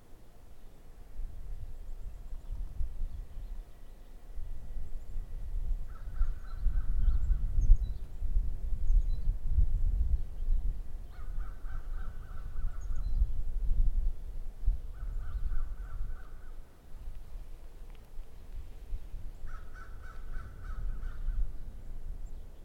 Ambient sound of birds, dogs, and wind recorded at Hickory Hill Park in Iowa City. Recorded on H4n Pro.
Johnson County, Iowa, United States, 23 January 2022